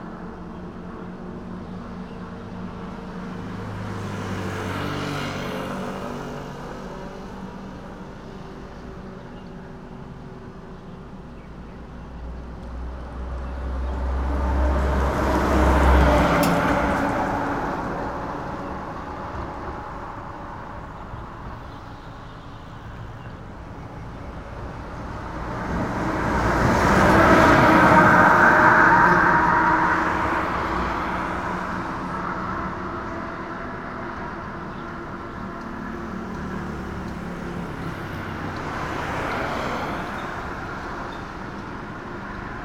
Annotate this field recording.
Traffic Sound, Binaural recordings, Sony PCM D50 +Soundman OKM II